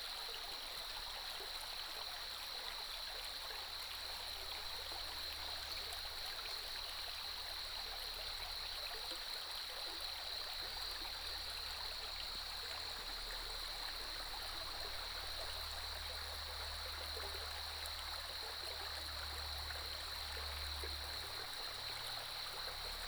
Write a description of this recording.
Streams and birdsong, The sound of water streams, Cicadas cry